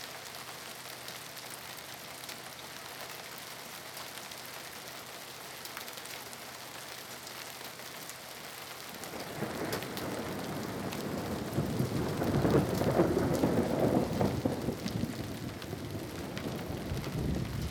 Hailstorm and thunder. sony ms mic